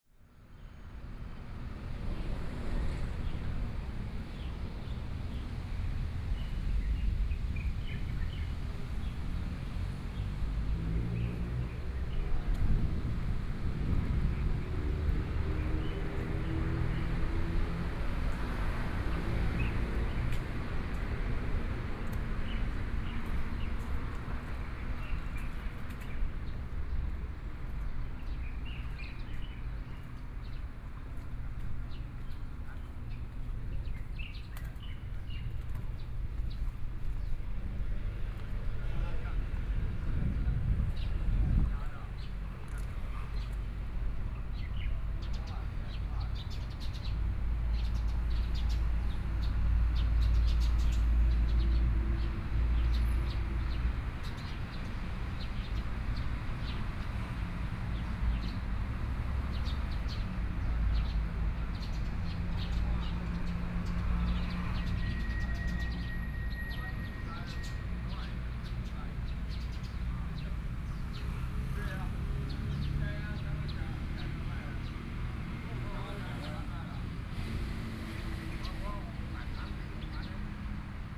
Birdsong, Traffic Sound, The weather is very hot
Kaohsiung City, Taiwan, 15 May 2014